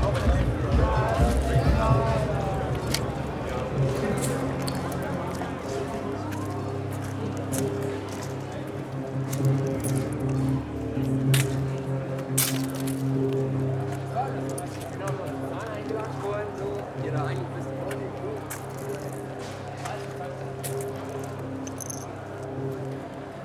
berlin, skalitzer straße: 1st may soundwalk (3) - the city, the country & me: 1st may soundwalk (3)
1st may soundwalk with udo noll
the city, the country & me: may 1, 2011